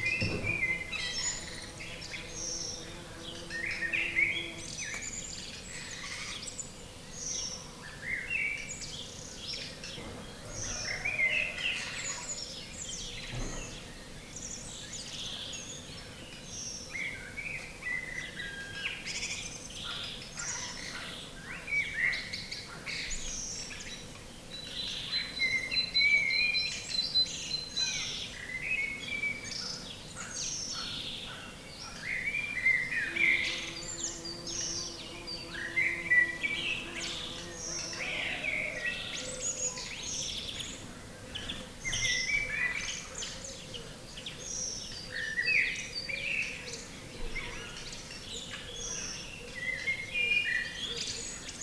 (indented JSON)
{
  "title": "tondatei.de: oberbergen, kaiserstuhl, vogelatmo - oberbergen, kaiserstuhl, vogelatmo",
  "date": "2010-04-05 06:09:00",
  "latitude": "48.10",
  "longitude": "7.66",
  "altitude": "250",
  "timezone": "Europe/Berlin"
}